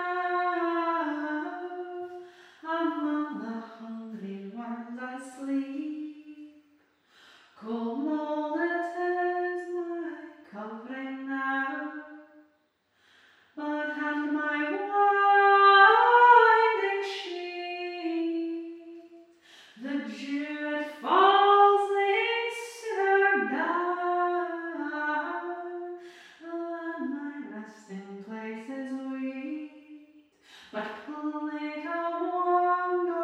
Melrose, Scottish Borders, UK - River Song - Kirsty Law, Clerk Saunders
Scots singer Kirsty Law singing the Border Ballad 'Clerk Saunders' inside the Summerhouse, Old Melrose, in the Scottish Borders. Recorded in September 2013, this well known Border Ballad is taken back into the heart of the environment from which it was produced, sung and eventually written. The Summerhouse at Old Melrose lies directly opposite Scott's View. The piece explores the song in the context of the resonance of the architecture of the historic building.